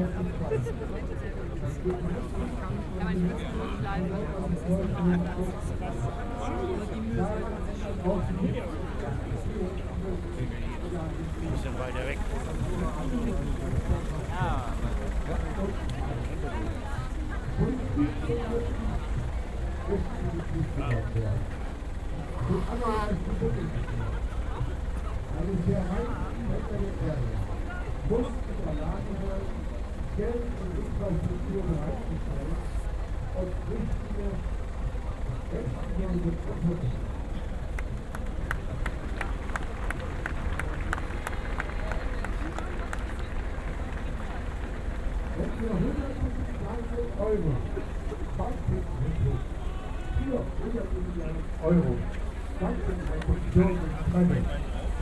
Deutz, Cologne, Germany - demonstration against right wing movement
some sounds from a demonstration against a recent weird right wing movement, which tried to gather in Cologne, unsuccessfully
(Sony PCM D50, OKM2)